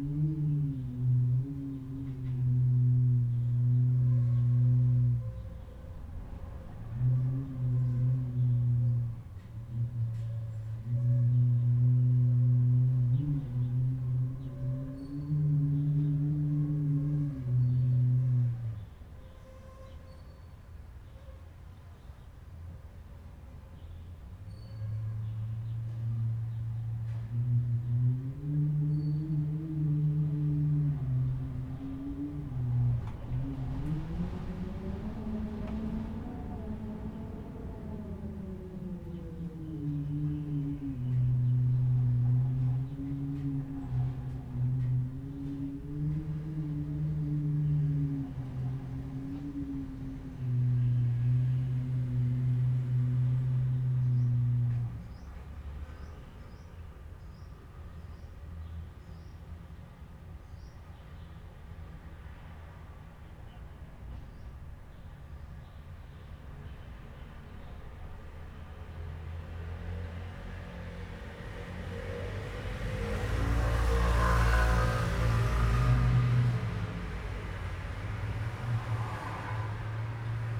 Xihu Township, Changhua County - The sound of the wind
The sound of the wind, In the hotel
Zoom H6 MS
Changhua County, Taiwan, March 2014